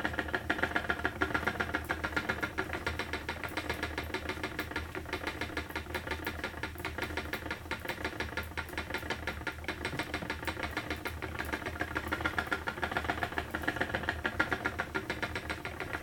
The Shetland Textile Museum, Böd of Gremista, Lerwick, Shetland - Spinning Shetland wool in the Shetland Textile Museum
This is the sound of Sue Athur from the Shetland Guild of Spinners, Knitters, Weavers and Dyers spinning yarn in the Shetland Textile Museum. She was working from some commercially prepared tops in a wide variety of natural Shetland sheep shades. This kind spinner who let me record her at the wheel, and another lady who works there - Barbara Cheyne - were both extremely helpful and friendly during my visit. We had an involved discussion about Fair Isle knitting and the use of colours in stranded knitwear. In the background, just beneath the sound of the spinning wheel, you can hear the sound of Barbara's knitting needles, clacking away gently in the background. She was making small gauntlets on metal needles while I recorded the sound of the wheel.